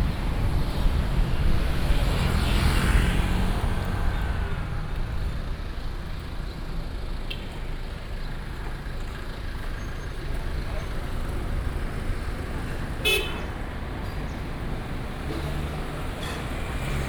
Traditional Market, Very hot weather, Traffic Sound